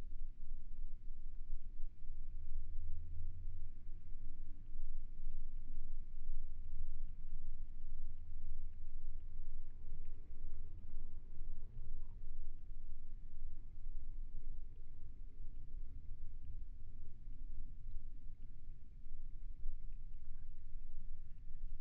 Mic/Recorder: Aquarian H2A / Fostex FR-2LE
trams rumbling - a tour boat passing by